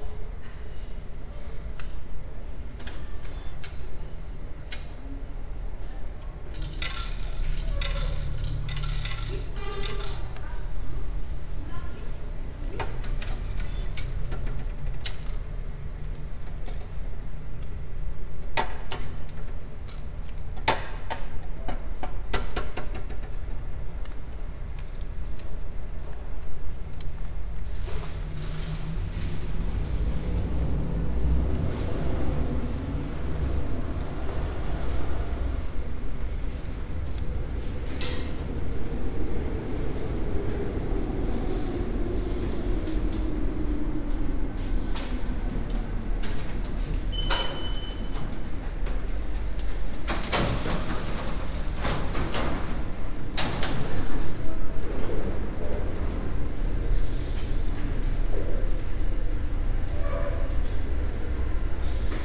Rue du Bac, Paris, France - (381) Metro ride from Rue du Bac station
Metro ride from Rue du Bac to Concorde station.
recorded with Soundman OKM + Sony D100
sound posted by Katarzyna Trzeciak